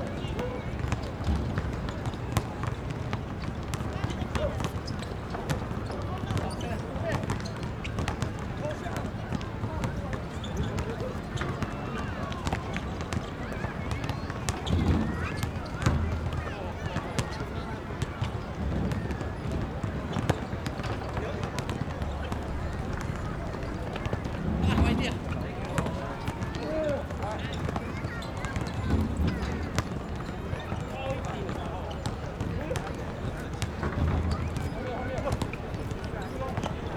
Play basketball, Aircraft flying through, Rode NT4+Zoom H4n